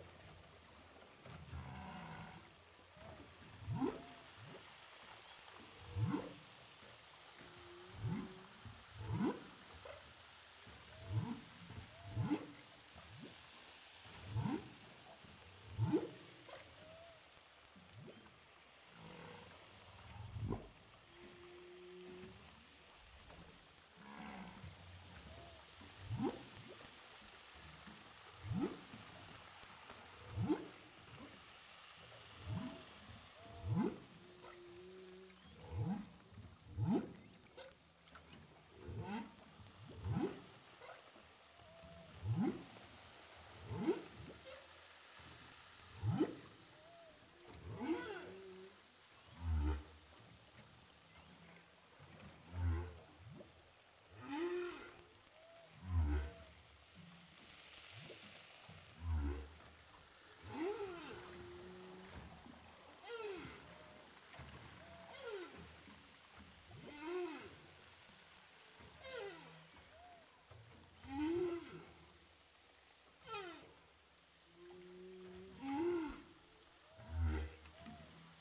Whales sound by hydrophophone DPA saint paul, ile de la reunion - Whales sound by hydrophophone saint paul, ile de la reunion
Baleines à bosse enregistrées à l'hydrophone DPA au large de saint Paul de la réunion
4 August